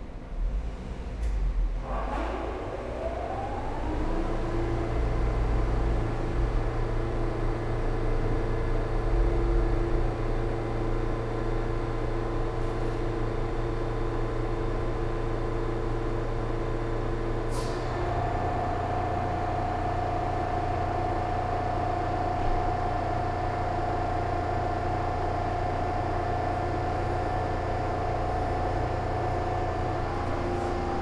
España, Moderna, León, Gto., Mexico - Verificación vehicular.
It is a program aimed at controlling polluting emissions by inspecting these emissions directly in vehicles through electronic probes and bands, for subsequent approval or rejection. A center responsible for performing this operation is commonly called Verificentro.
I made this recording on February 1, 2020 at 14:07
I used a Tascam DR-05X with its built-in microphones and a Tascam WS-11 windshield.
Original Recording:
Type: Stereo
Se trata de un programa dirigido al control de las emisiones contaminantes mediante la inspección de dichas emisiones directamente en los vehículos a través de sondas y bandas electrónicas, para su posterior aprobación o rechazo. Un centro encargado de realizar esta operación es comúnmente llamado Verificentro.
Esta grabación la hice el 1 de febrero 2020 a las 14:07
Usé una Tascam DR-05X con sus micrófonos incorporados y un parabrisas Tascam WS-11.
Guanajuato, México, February 2020